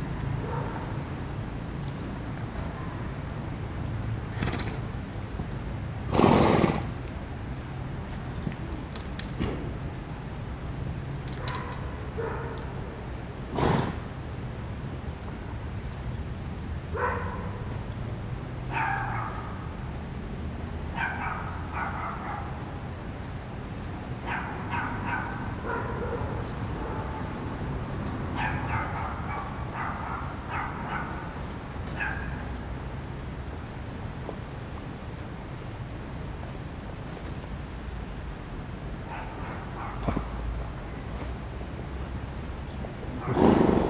Chaumont-Gistoux, Belgique - Horses
In the center of Gistoux, horses are exhaust with the flies. Regularly, they chase these flies with noisy fblblblbl. In the background, the Gistoux bells ring four.
Chaumont-Gistoux, Belgium, 15 August 2016, 15:58